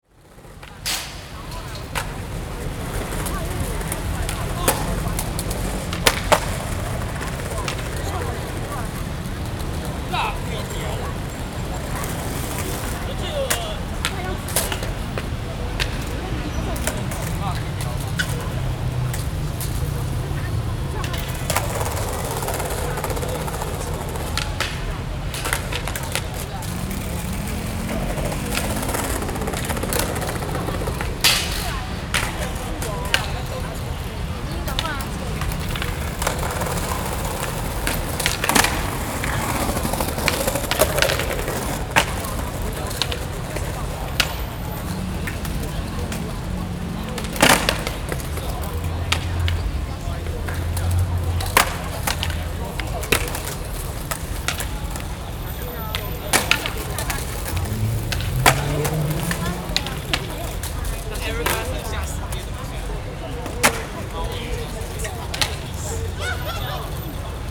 Wanhua, Taipei - Skateboard
A group of young people are skateboarding, Zoom H4n+AKG -C1000s